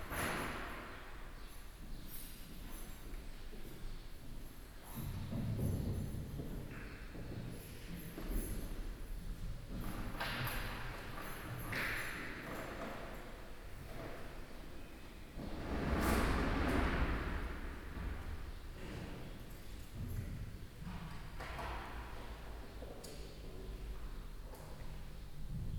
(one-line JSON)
{"title": "St Josef, Hamm, Germany - last piece from the organ noon lock-down", "date": "2020-04-12 11:55:00", "description": "inside a few people dispersed across empty benches, last piece from the organ, the organist packs up and leaves… noon, lock-down...", "latitude": "51.67", "longitude": "7.80", "altitude": "65", "timezone": "Europe/Berlin"}